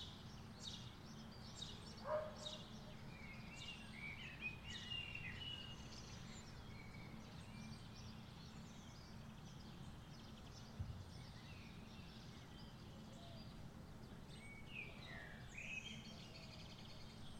Platanias, Crete, at the graveyard
soundscape of Platanias from the top hill
26 April 2019, ~15:00